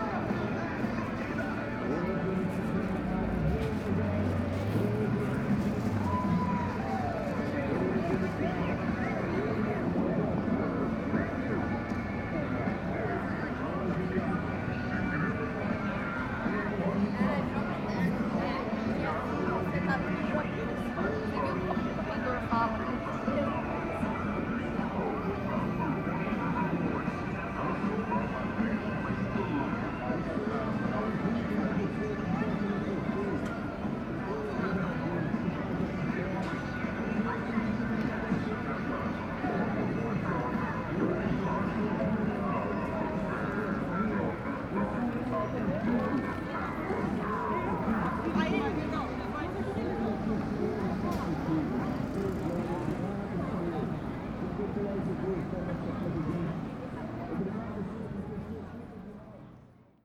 Calçadão de Londrina: Vendedora informal: hippie - Vendedora informal: hippie / informal salesman: hippie
Panorama sonoro: um pequeno aparelho de som instalado em uma barraquinha de uma vendedora informal (hippie) no meio do Calçadão em um sábado à tarde. Ele emitia músicas do estilo rock’n roll brasileiro típico da década de 1970. Sobrepunham-se a elas músicas evangélica e pregação religiosa proveniente da ação de evangelizadores localizados em outra quadra do Calçadão.
Sound panorama: a small stereo set up in a stall of an informal salesperson (hippie) in the middle of the Boardwalk on a Saturday afternoon. He emitted songs of the typical Brazilian rock'n roll style of the 1970s. They were overlaid with gospel songs and religious preaching from the action of evangelizers located in another block of the Boardwalk.